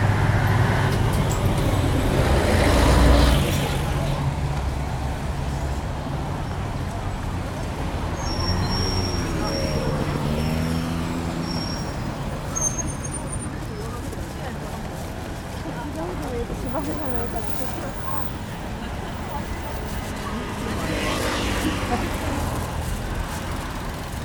Sanjo Ohashi - Sanjo Ohashi - No Ceremony
When I pressed stop on my recorder for the last time nothing special happened. I’m not sure what I was expecting to happen, but I felt like I had just finished something significant and there was no one to congratulate or celebrate with me; All the passers-by carried on as normal and pay no attention to me, and I still had to find a place to sleep that night. This reminded me of why I decided to walk and record the Tōkaidō in the first place: over the years this historic road is disappearing in chunks, and when these chunks vanish they do so suddenly and without any ceremony – just paved over as if what was being covered never meant anything at all.